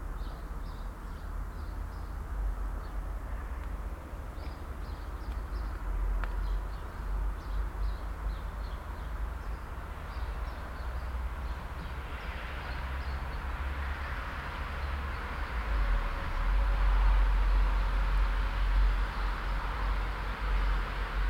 December Sunday morning on a street in a small rural town. Mostly traffic from the near main road, some chirping birds, and very quiet a few pedestrians talking and coughing in a distance.
Binaural recording, Soundman OKM II Klassik microphone with A3-XLR adapter and windshield, Zoom H6 recorder.
Wrist, Germany, 17 December 2017